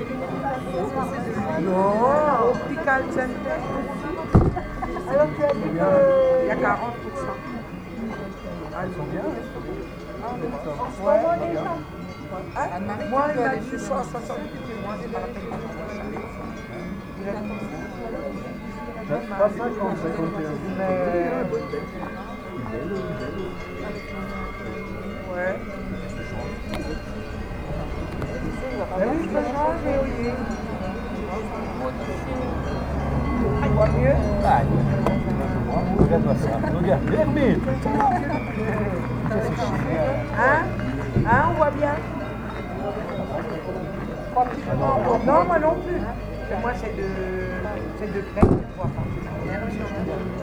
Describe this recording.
unterhaltung, französisch, herberge, restaurant, hütte